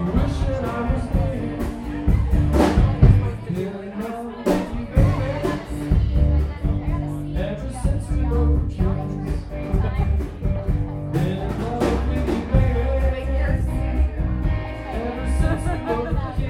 {
  "title": "Broadway St., Boulder, CO - Woodbar Speakeasy",
  "date": "2013-02-02 21:30:00",
  "description": "This is an upload of the Speakeasy in Boulder Colorado on the Hill",
  "latitude": "40.01",
  "longitude": "-105.28",
  "altitude": "1658",
  "timezone": "America/Denver"
}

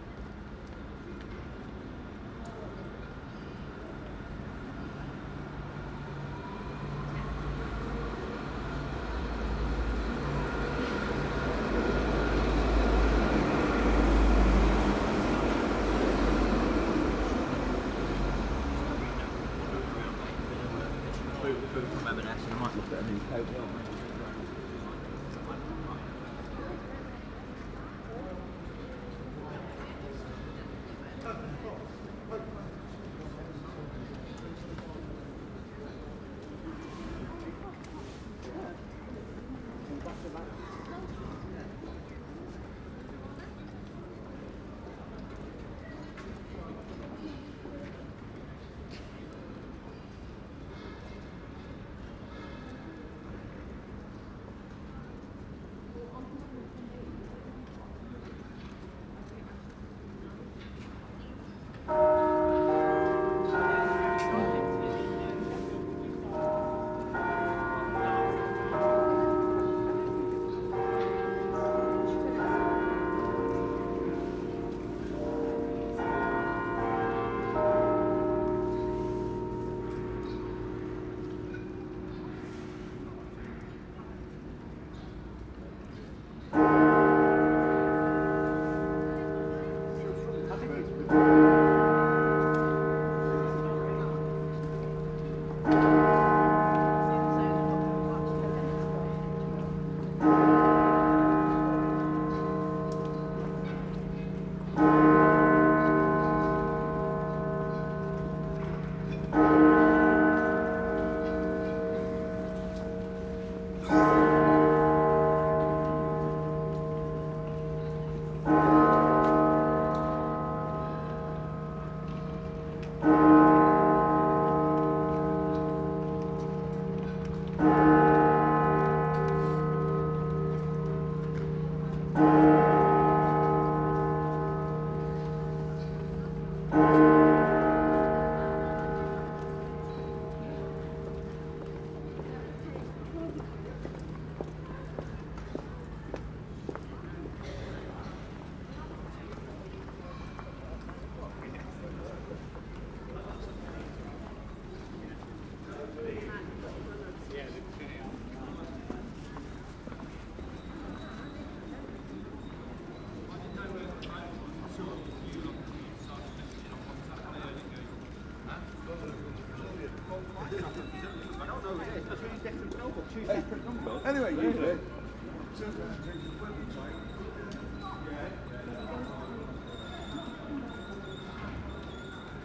{
  "title": "Cheapside, Nottingham, UK - The impressive Exchange bell strikes 12, trams pass by",
  "date": "2018-01-06 11:55:00",
  "description": "The Nottingham city hall bell is called, 'Little John' (I guess after the famous character in the Robin Hood stories). It's ring is the deepest of any bell in the UK. I liked the sound of the passing trams too. Am surprised that on this Saturday the city center was so quiet.",
  "latitude": "52.95",
  "longitude": "-1.15",
  "altitude": "48",
  "timezone": "Europe/London"
}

